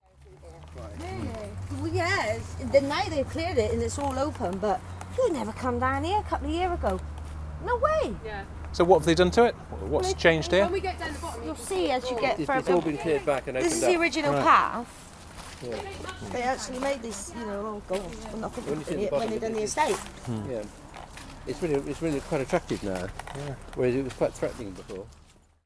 Walk Three: Clearing Efford Valley
Plymouth, UK, 4 October